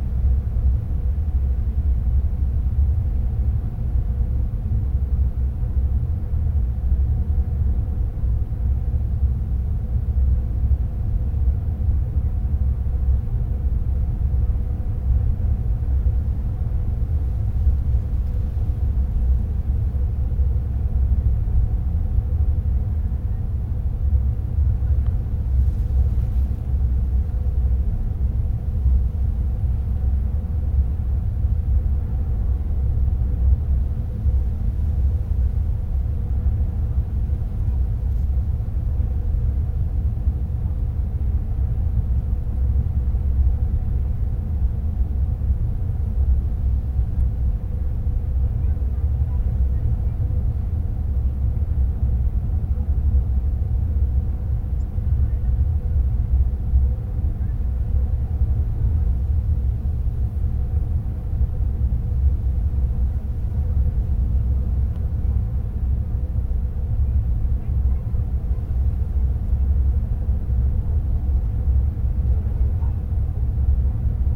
Unnamed Road, Formazza VB, Italy - Hydroelectric Plant Air Conduit Drone
Drone coming from a man-made structure along the steep slope of the mountain, to help ventilation in underground conduits. Recorder sitting in thick grass, the rustling of wind in the grass can be heard, along with some voices coming from the trail below. Recorded with an Olympus LS-14
Verbano-Cusio-Ossola, Piemonte, Italia, 15 August 2020, 14:30